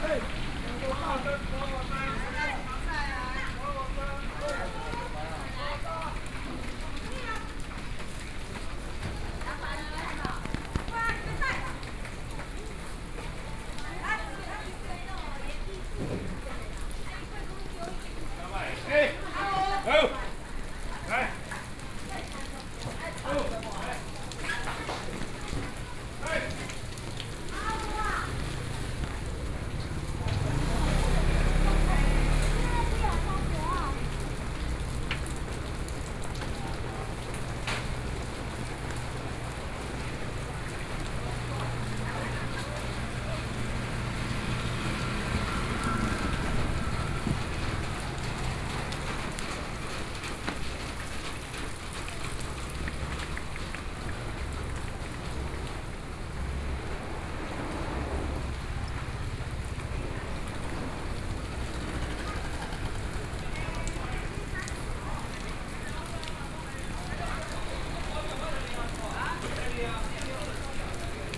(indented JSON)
{
  "title": "Qingtan Rd., Luodong Township - the traditional market",
  "date": "2013-11-07 09:15:00",
  "description": "Rainy Day, The traffic sounds, Walking through the traditional market, From the indoor to the outdoor market markett, Zoom H4n+ Soundman OKM II",
  "latitude": "24.67",
  "longitude": "121.77",
  "altitude": "15",
  "timezone": "Asia/Taipei"
}